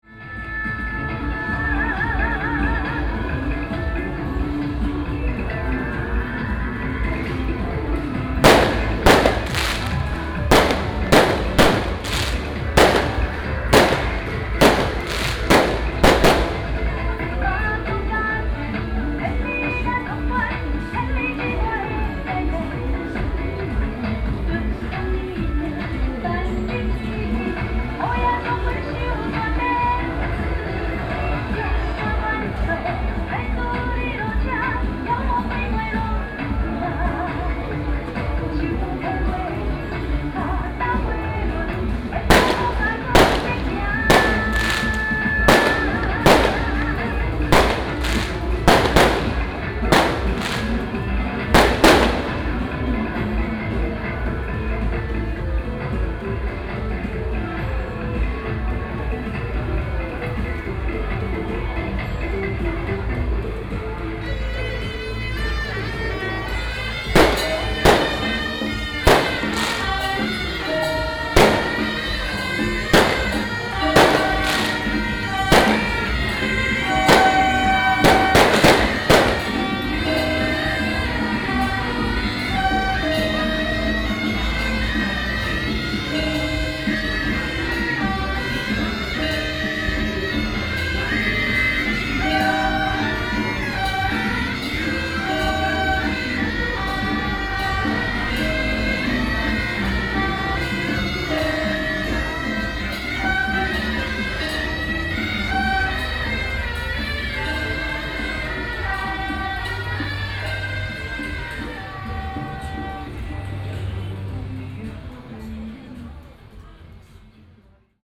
Shuntian Rd., 大甲區順天里 - temple fair
temple fair, Dajia Matsu Pilgrimage Procession, Fireworks and firecrackers
Dajia District, Taichung City, Taiwan